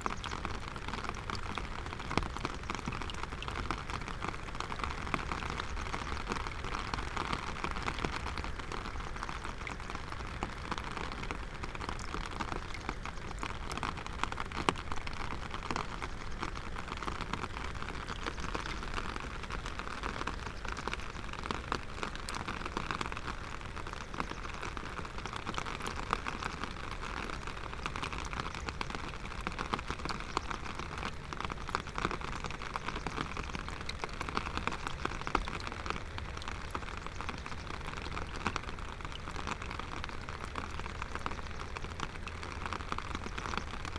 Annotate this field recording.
changed river morphology; strong flow of water has closed the way to the gravel bars